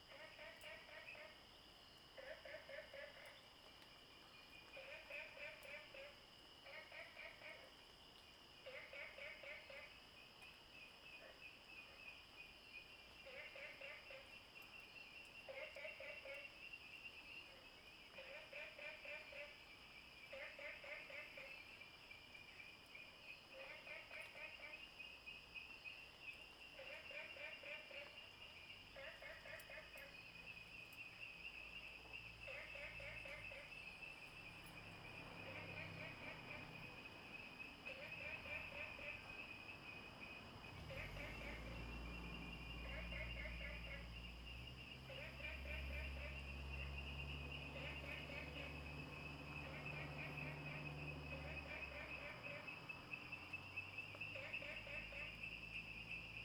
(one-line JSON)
{"title": "TaoMi Li., 綠屋民宿桃米里 - Late at night", "date": "2015-04-28 23:22:00", "description": "Frogs chirping, at the Hostel, Sound of insects, Late at night, In the parking lot\nZoom H2n MS+XY", "latitude": "23.94", "longitude": "120.92", "altitude": "503", "timezone": "Asia/Taipei"}